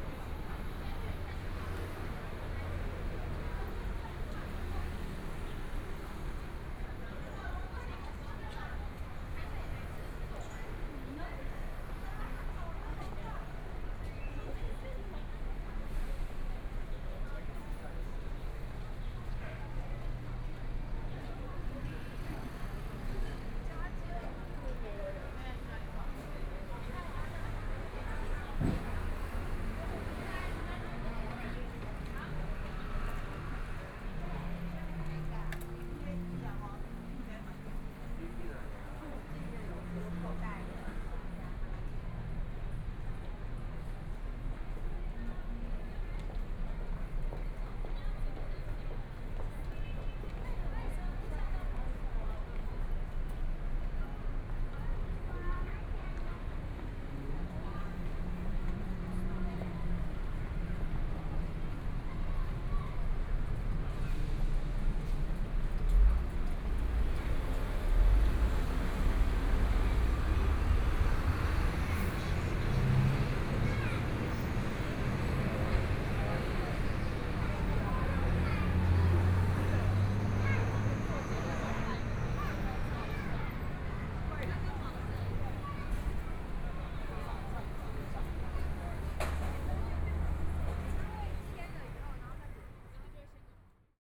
{
  "title": "Nanjing W. Rd., Taipei - walking on the Road",
  "date": "2014-02-06 14:10:00",
  "description": "walking on the Road, Traffic Sound, Through a variety of different shops, Binaural recordings, Zoom H4n+ Soundman OKM II",
  "latitude": "25.05",
  "longitude": "121.52",
  "timezone": "Asia/Taipei"
}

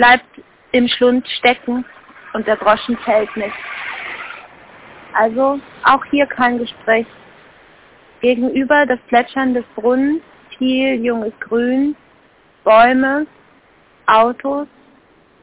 {
  "title": "Münztelefon, Urbanstraße, Berlin - Boulespielfeld auf der Verkehrsinsel 22.04.2007 13:06:29",
  "latitude": "52.49",
  "longitude": "13.41",
  "altitude": "39",
  "timezone": "GMT+1"
}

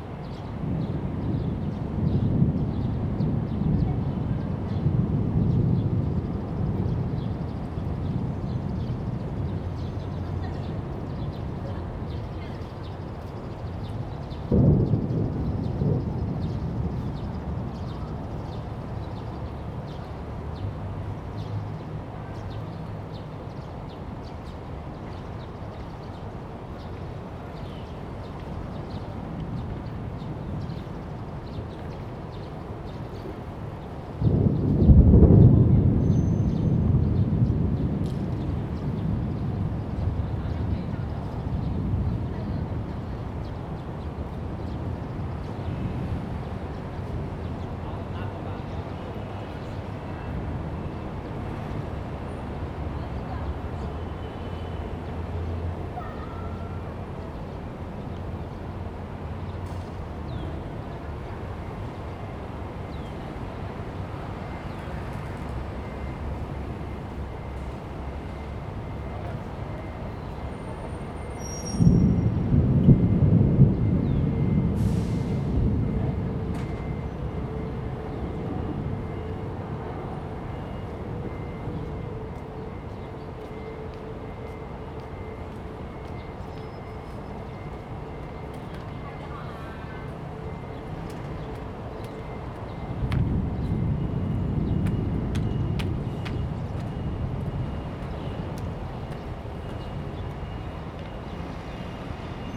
{
  "title": "碧潭, Xindian Dist., New Taipei City - Thunder and birds",
  "date": "2015-07-28 15:04:00",
  "description": "Sitting on the embankment side, Viaduct below, Thunder\nZoom H2n MS+ XY",
  "latitude": "24.96",
  "longitude": "121.54",
  "altitude": "18",
  "timezone": "Asia/Taipei"
}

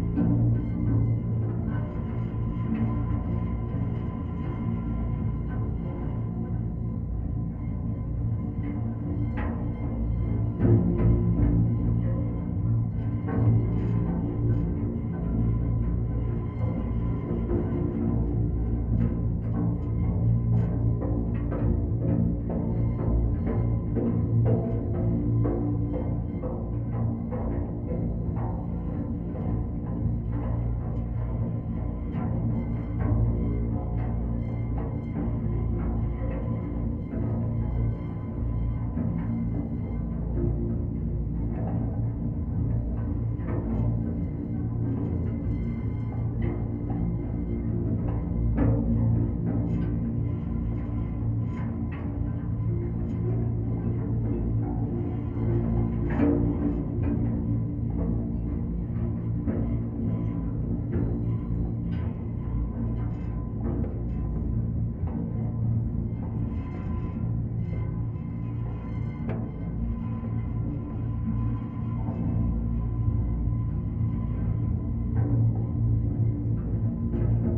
{
  "title": "Parallel sonic worlds: Millennium Bridge deep drone, Thames Embankment, London, UK - Millennium Bridge wires singing in the wind",
  "date": "2022-05-16 14:02:00",
  "description": "The sound of winds in the wires of the bridge picked up by a contact mic. The percussive sounds are the resonance of footsteps and rolling cases.",
  "latitude": "51.51",
  "longitude": "-0.10",
  "altitude": "3",
  "timezone": "Europe/London"
}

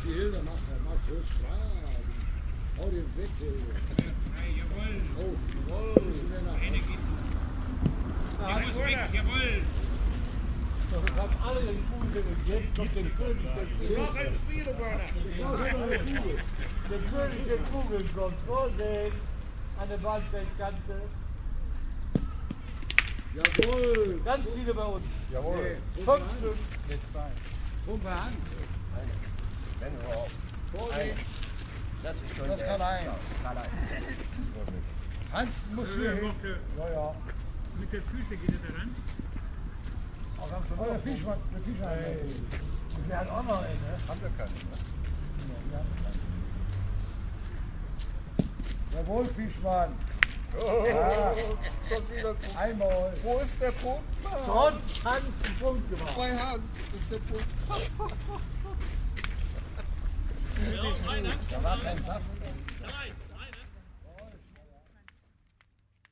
{
  "title": "erkrath, leo heinen platz - boule spiel",
  "date": "2008-04-18 13:39:00",
  "description": "tägliches, morgendliches spielritual einer gemeinschaft älterer menschen - aufnahme im frühjahr 07\nproject: :resonanzen - neanderland soundmap nrw: social ambiences/ listen to the people - in & outdoor nearfield recordings",
  "latitude": "51.22",
  "longitude": "6.91",
  "altitude": "57",
  "timezone": "Europe/Berlin"
}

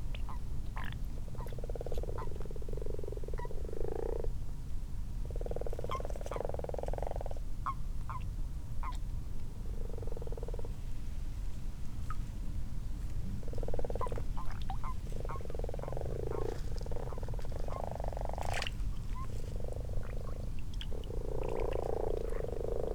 {
  "title": "Malton, UK - frogs and toads ...",
  "date": "2022-03-12 20:52:00",
  "description": "common frogs and common toads in a garden pond ... xlr sass to zoom h5 ... time edited unattended extended recording ...",
  "latitude": "54.12",
  "longitude": "-0.54",
  "altitude": "77",
  "timezone": "Europe/London"
}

